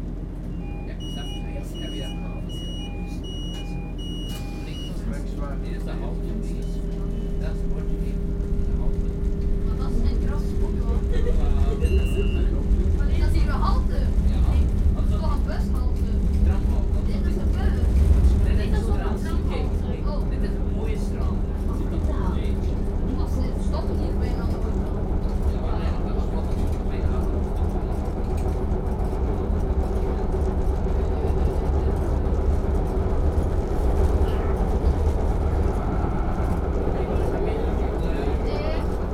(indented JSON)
{
  "title": "Nieuwpoort, Belgique - Kusttram",
  "date": "2018-11-16 18:00:00",
  "description": "The tramway of the Belgian coast, between Lombardsijde and Nieuwpoort-Bad.",
  "latitude": "51.13",
  "longitude": "2.75",
  "altitude": "3",
  "timezone": "Europe/Brussels"
}